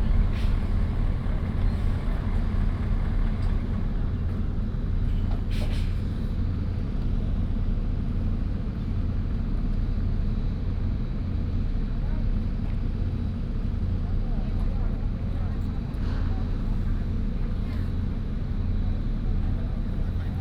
八斗子漁港, Keelung City - In the fishing port
Traffic Sound, In the fishing port